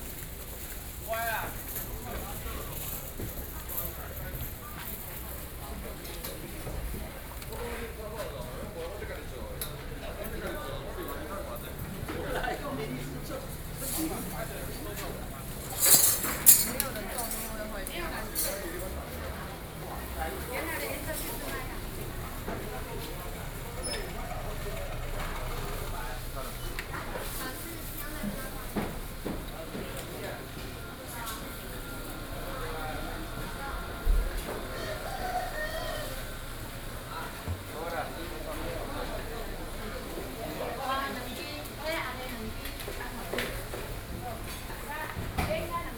汐止區, New Taipei City - Traditional markets
Xizhi District, New Taipei City, Taiwan